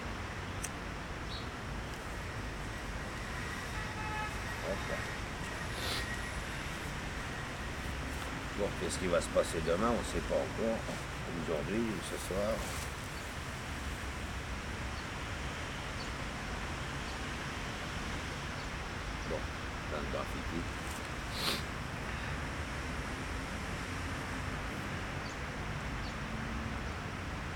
Archimede's testimony, Paris, 2004